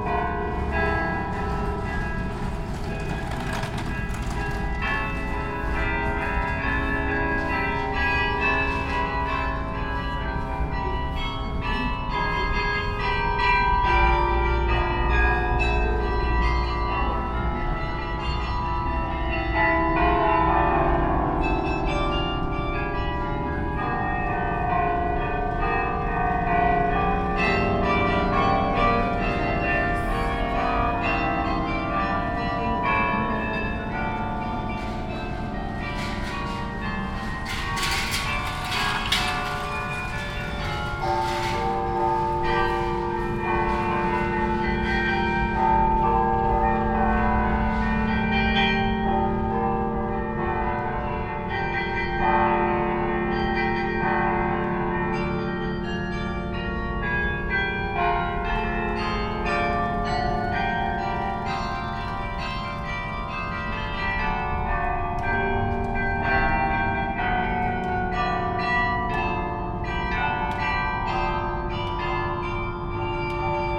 Hallestraat, Brugge, Belgium - Brugge Belfort Carillon - Bohemian Rhapsody
Brugge Belfort Carillon - Bohemian Rhapsody - 2nd October 2019 11:37.
Field recording of the Brugge Belfort Carillon performing a rendition of Bohemian Rhapsody.
Gear:
Sony PCM-M10 built-in mics